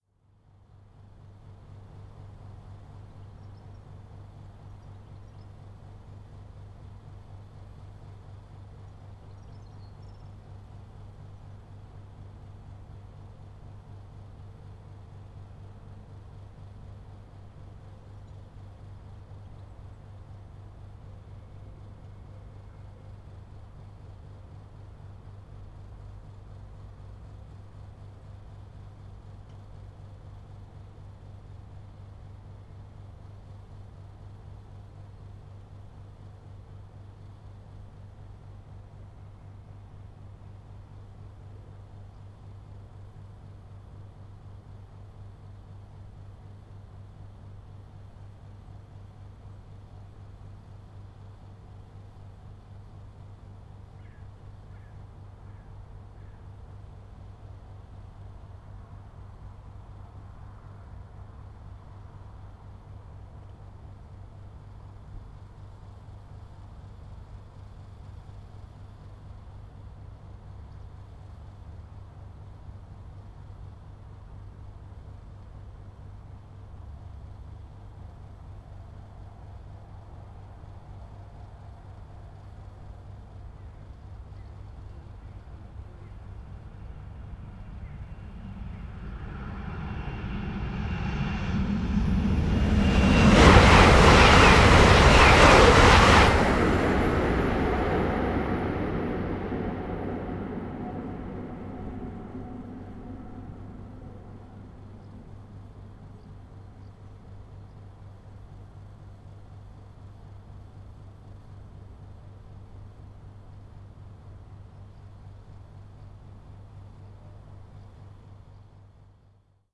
Limburg Süd, ICE Bahnhof / station - Bahnsteigende / platform end, ICE3 high speed train passing
am Ende des Bahnsteigs, Atmo, Klimaanlage eines Gebäudes in der Nähe
Der Bahnhof ist viergleisig ausgebaut. Die beiden mittleren Gleise dienen als Durchfahrtsgleise und sind in fester Fahrbahn ausgeführt. Durchfahrende ICE können den Bahnhof darauf ohne Geschwindigkeitsverminderung mit bis zu 300 km/h passieren, während haltende Züge über Weichen die beiden Außenbahnsteige mit 100 km/h anfahren. Eine Brücke, die von beiden Bahnsteigen mit je einer Treppe und einem Aufzug erreicht werden kann, überspannt die Gleisanlage und ermöglicht den Zugang zu Gleis 4 (Züge Richtung Köln).
platform end, ambient, aircon of a nearby building
The station is served by regular InterCityExpress services. Due to Limburg's relatively small size, passenger traffic is rather low, although commuters to Frankfurt am Main value the fast connections. Some 2,500 people use the station daily.